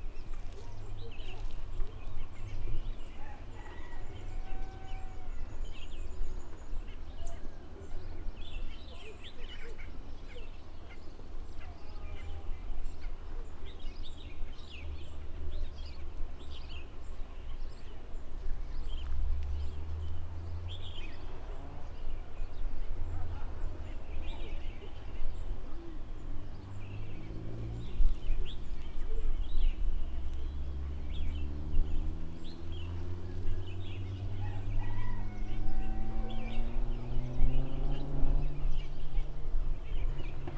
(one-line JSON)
{"title": "Chemin Lisiere de la Foret, Réunion - 20181116 150328 lg78rurv1953 chemin lisière de la foret AMBIANCE SONORE CILAOS", "date": "2018-11-16 15:03:00", "description": "Enregistrement sonore.Quartier du Matarum, CILAOS Réunion. On a ici La Réunion des oiseaux de la forêt avec ceux des jardins, le bull bull de la Réunion (merle-pei) avec le bull bull orphée (merle-maurice, celui qui domine), plus les autres oiseaux tels le cardinal, la tourterelle malgache, les oiseaux blancs et oiseaux verts, les tec tec, au loin, des martins, des becs roses, avec un peu de coq et de chiens, et de la voiture tuning. Par rapport aux années 1990 même saison, cette ambiance sonore s'est considérablement appauvrie en grillons diurnes. Il ya des abeilles. On n'entend pas vraiment de moineaux.", "latitude": "-21.13", "longitude": "55.48", "altitude": "1318", "timezone": "GMT+1"}